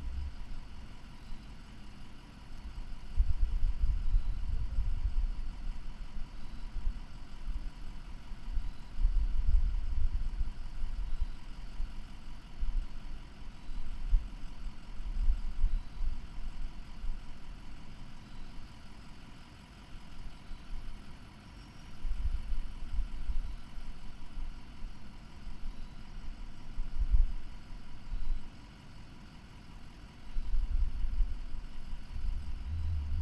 The College of New Jersey, Pennington Road, Ewing Township, NJ, USA - Outside ambience Next to the AIMM Building at TCNJ
Outside ambience, a truck is running next to the student center. Crickets are chirping